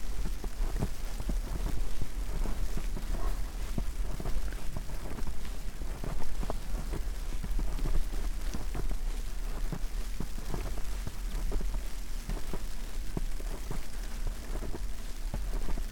sonopoetic path, Maribor, Slovenia - walking poem
snow, walk, spoken words, soreness and redness